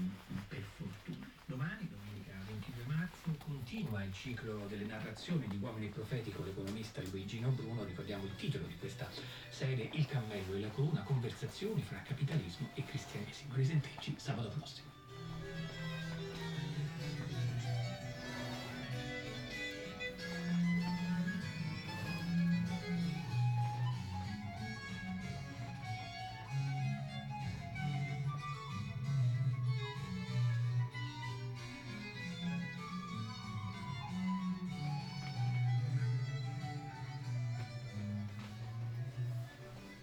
"Jour du Printemps au marché aux temps du COVID19" Soundwalk
Saturday March 21th 2020. First Spring day at the Piazza Madama Cristina open market at San Salvario district, Turin.
Eleven days after emergency disposition due to the epidemic of COVID19.
Start at 10:12 a.m. end at 10:41 a.m. duration of recording 29’49”
The entire path is associated with a synchronized GPS track recorded in the (kmz, kml, gpx) files downloadable here: